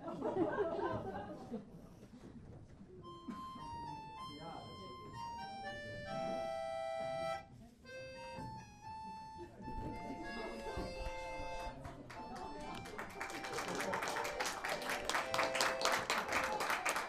sehmannsgarn theater greifswald t. reul applaus